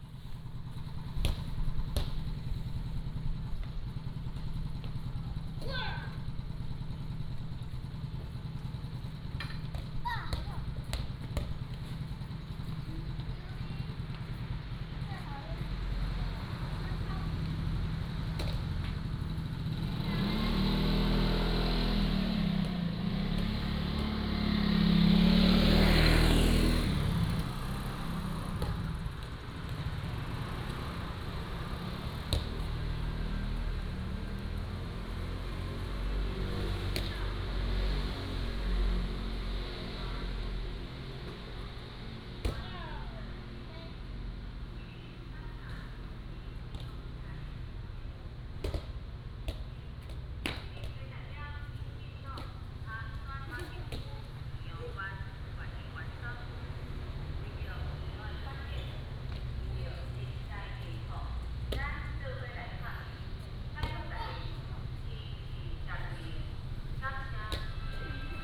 天后宮, Magong City - in front of the temple
In the square, Traffic Sound, In front of the temple